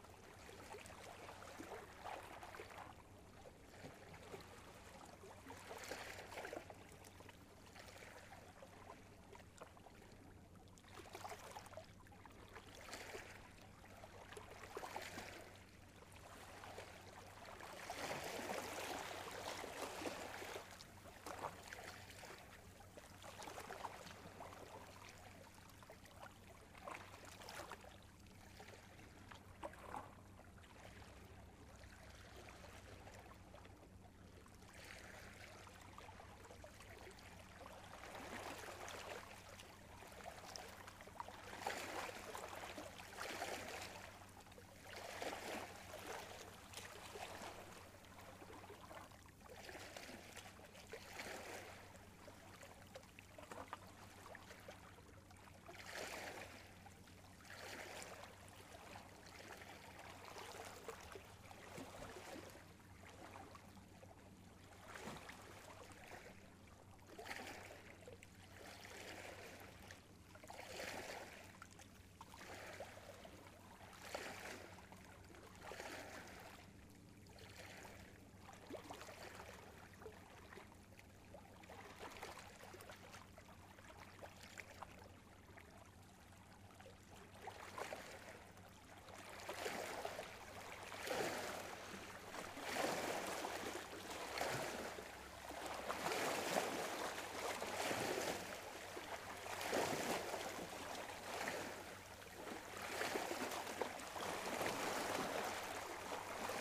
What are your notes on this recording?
A late afternoon in Vatlestraumen on the outskirt of Bergen, Norway. There was next to no wind for the first time i ages, so I ran out to do some recording of a narrow fjord. Vatlestraumen is a busy route in and out of Bergen harbor, so you can hear both smaller crafts, and bigger ships in this relatively short recording. There is also a nearby airport, and a bridge. You can also hear some birds in the background, Recorder: Zoom H6, Mic: 2x Røde M5 MP in Wide Stereo close to the water, Normalized to -7.0 dB in post